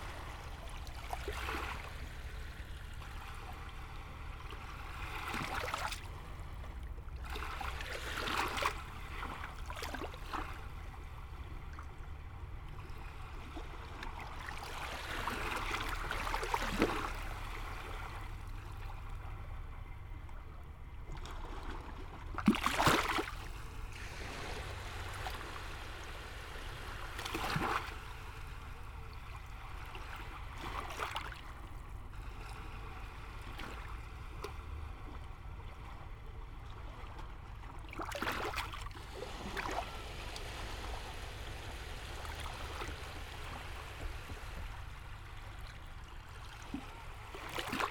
Ventspils, Latvia, at pier
just re-visiting the place after 9 years...
Kurzeme, Latvija